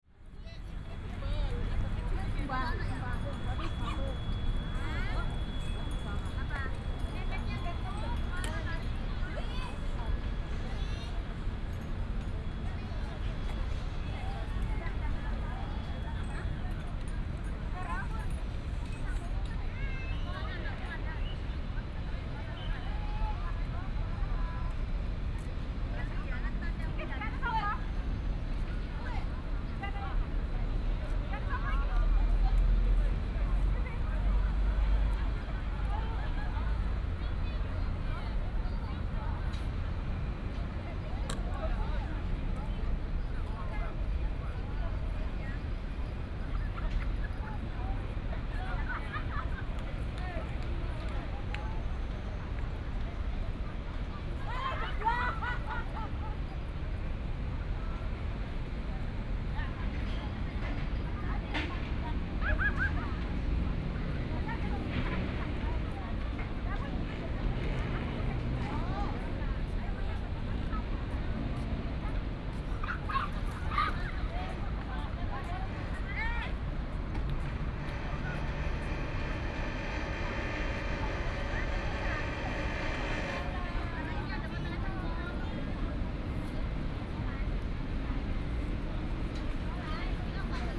桃園藝文廣場, Taoyuan Dist., Taoyuan City - In the square
Traffic sound, In the square, Construction sound
Taoyuan City, Taiwan